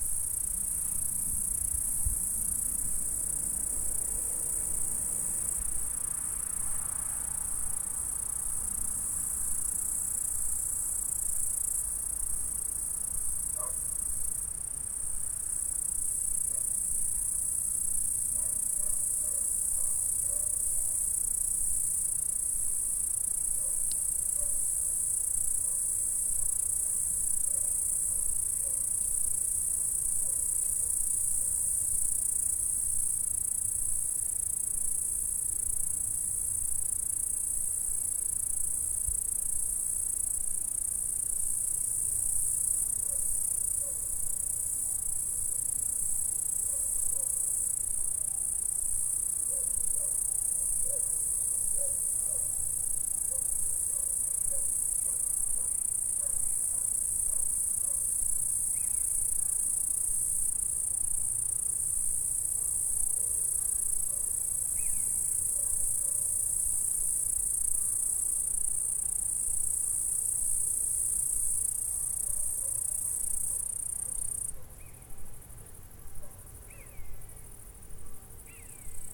Stridulations dans la prairie.
France métropolitaine, France, 19 August 2016, ~19:00